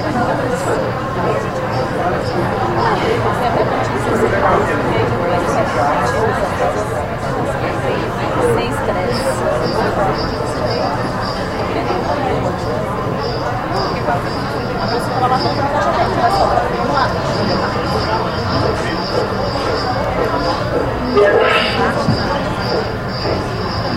Brasília, DF, Brasil - 6º Encontro Arteduca
Encontro acadêmico do Arteduca/UnB